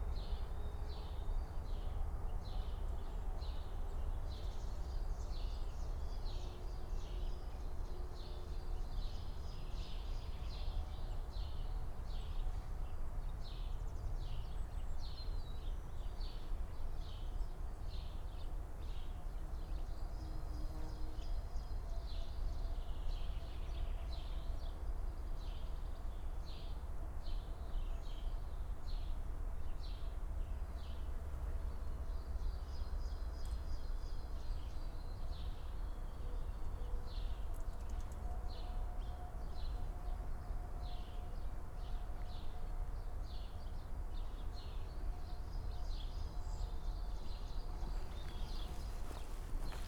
Borschemich, Erkelenz - church bells
church bells at 3pm in Borschemich, a nice village in the west of germany, over 1100 years old. as many others in this area, this village will be destructed soon, eaten by the growing Garzweiler brown coal mining in the east. it's almost abandoned, only a few people left.